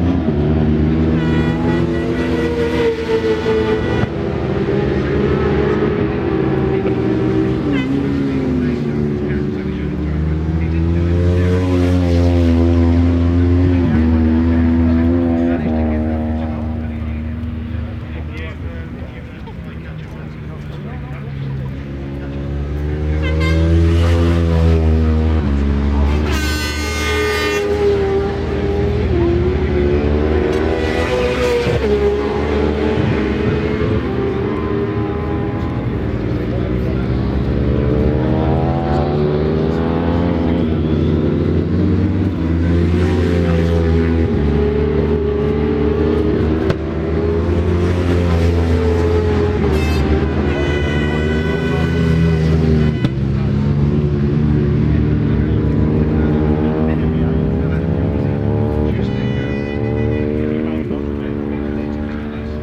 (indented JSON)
{
  "title": "Brands Hatch Circuits Ltd, Brands Hatch Road, Fawkham, Longfield, United Kingdom - World Superbikes 2000 ... Superpole ...",
  "date": "2000-08-05 16:00:00",
  "description": "World Superbikes 2000 ... Superpole ... part one ... one point stereo mic to minidisk ...",
  "latitude": "51.36",
  "longitude": "0.26",
  "altitude": "139",
  "timezone": "GMT+1"
}